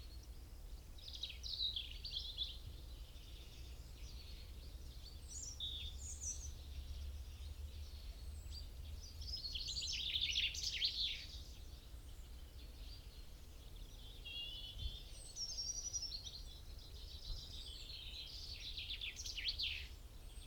Luttons, UK - Chaffinch song soundscape ...
Chaffinch song and call soundscape ... recorded with binaural dummy head to Sony minidisk ... bird song ... and calls from ...tree sparrow ... dunnock ... robin ... longtail tit ... wood pigeon ... stock dove ... great tit ... blue tit ... coal tit ... rook ... crow ... plus background noise ... traffic ...
Malton, UK